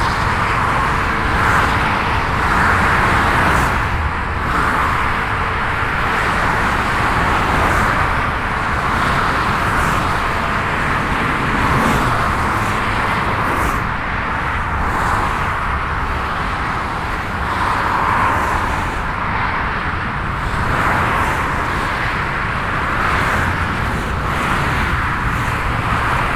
Weetfeld, Hamm, Germany - Zur Gruenen Aue 3
walking to the middle of the motorway bridge and back; Sunday evening…
gehe bis zur Mitte der Autobahnbrücke und zurück; Sonntagabend…
Before due to meet some representatives of an environmental activist organization in Weetfeld, I’m out exploring the terrain, listening, taking some pictures…
Ein paar Tage vor einem Treffen mit Vertretern der “Bürgergemeinschaft gegen die Zerstörung der Weetfelder Landschaft”, fahre ich raus, erkunde etwas das Terrain, höre zu, mache ein paar Fotos…
“Citisen Association Against the Destruction of the Environment”
(Bürgergemeinschaft gegen die Zerstörung der Weetfelder Landschaft)